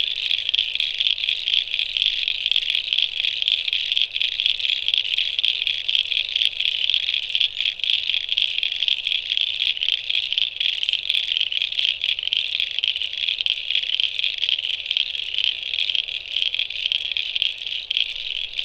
Royal National Park, NSW, Australia - Leaving my microphones by a coastal lagoon, after 21c Winter's day
First 40 minutes of an overnight recording. A little introduction and then listen as the frogs go from quiet to deafening!
Recorded with a pair of AT4022's into a Tascam DR-680.